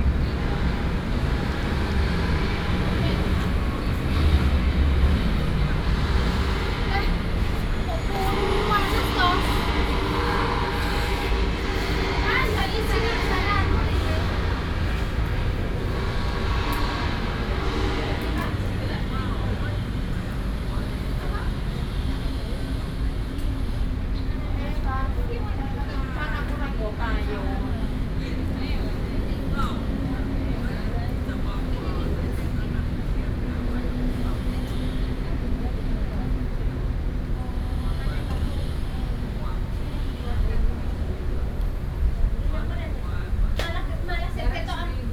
Xindian District - chat
in the Park, Engineering Noise, Several chat between foreign workers and the elderly, Zoom H4n+ + Soundman OKM II
New Taipei City, Taiwan, 28 June 2012, ~16:00